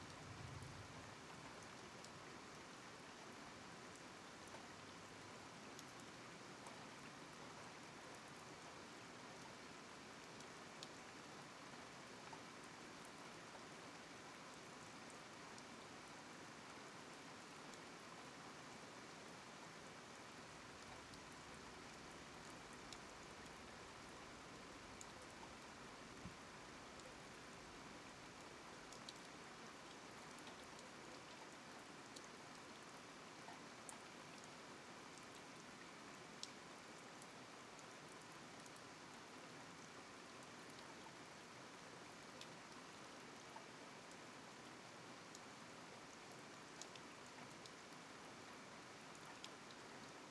Borough of Colchester, UK - Thunderstorm Over Colchester
Thunderstorm recorded with Roland R44-e + USI Pro overnight(excerpt)